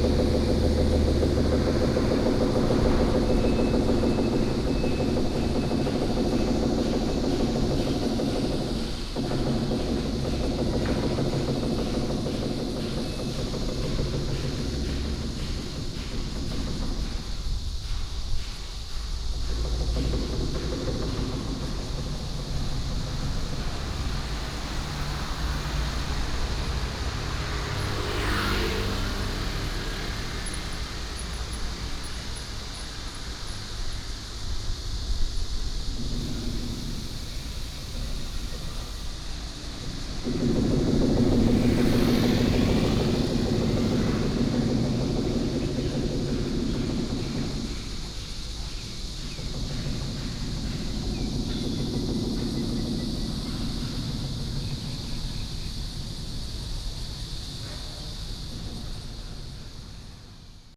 Sec., Zhongshan W. Rd., Xinwu Dist. - Viaduct construction
Viaduct construction, traffic sound, Cicada cry, birds sound
Taoyuan City, Taiwan, 26 July 2017